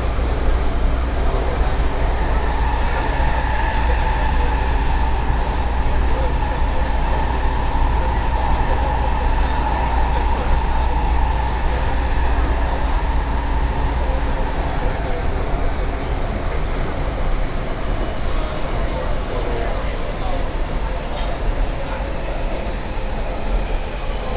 Cianjin District, Kaoshiung - inside the Trains
inside the MRT train, Sony PCM D50 + Soundman OKM II